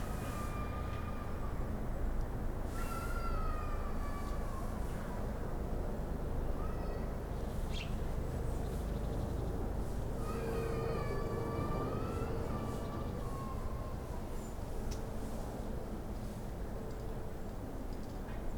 Am Stadtpark, Schkeuditz, Deutschland - Villa Musenkuss
Soundfieldrecording aus dem Garten des soziokulturellen Zentrums "Villa Musenkuss" in Schkeuditz. Aufgenommen während eines Workshops zur Klangölologie am Nachmittag des 22.2.2018 mit Annabell, Marlen und Talitha. Zoom H4N + Røde NT5.